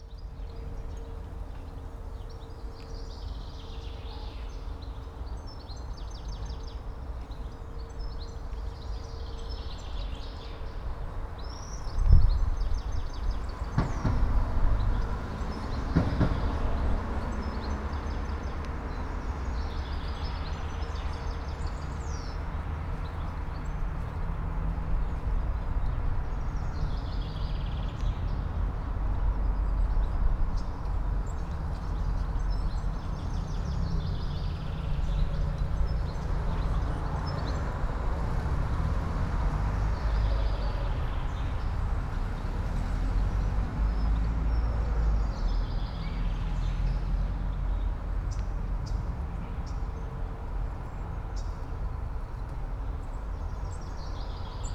all the mornings of the ... - apr 27 2013 sat
Maribor, Slovenia